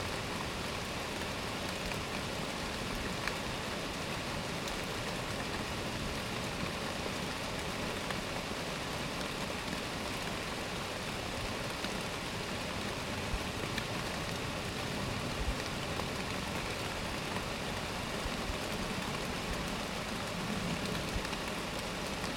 Heaton Park, Newcastle upon Tyne, UK - Trees in heavy rain, Heaton park
Walking Festival of Sound
13 October 2019
Sheltering in trees in heavy rain